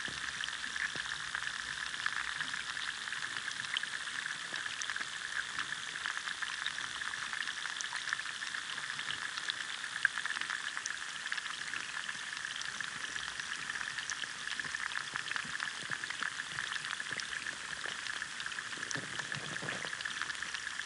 Newmill Trout Fishery, Mouse Water, Lanark, UK - Waterway Ferrics Recording 002
Recorded in mono with an Aquarian Audio H2a hydrophone and a Sound Devices MixPre-3.